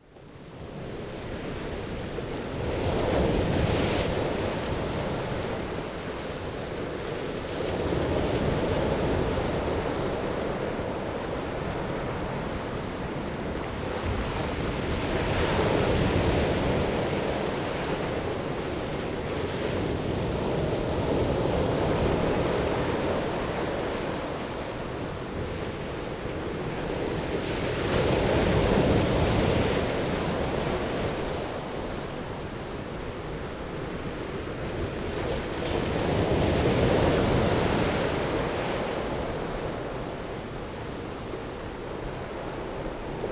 Jizazalay, Ponso no Tao - sound of the waves
sound of the waves
Zoom H6 + Rode NT4